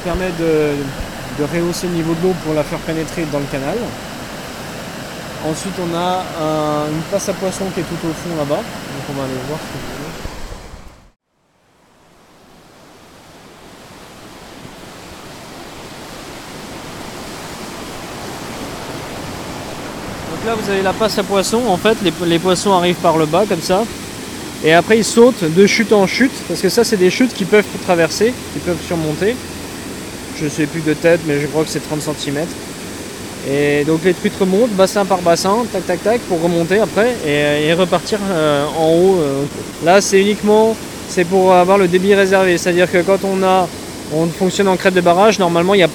GME / Chermenil - Cornimont, France
Grand-Meix Electricité fait l'acquisition de la centrale de Cherménil en 1995.
19 October 2012, 09:30